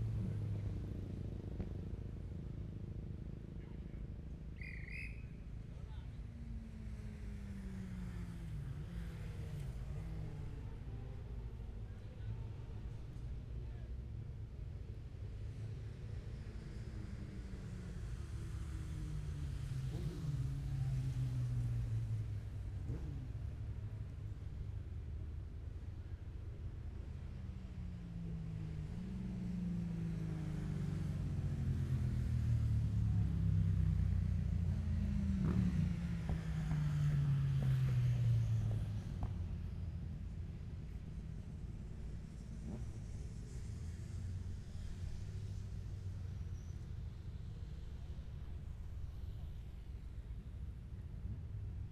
Sighting laps ... Mere Hairpin ... Oliver's Mount ... Scarborough ... open lavalier mics clipped to baseball cap ...
Scarborough, UK, 24 September 2016